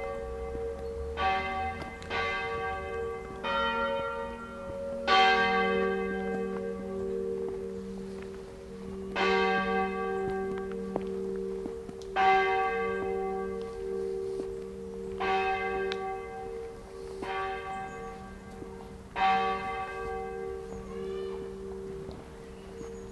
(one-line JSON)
{"title": "lippstadt, churchbells in the evening, footsteps, ducks", "date": "2009-05-07 11:17:00", "description": "eveningtime, churchbells, park walkers passing by in the end ducks on the river\nsoundmap nrw: social ambiences/ listen to the people - in & outdoor nearfield recordings", "latitude": "51.68", "longitude": "8.34", "altitude": "78", "timezone": "GMT+1"}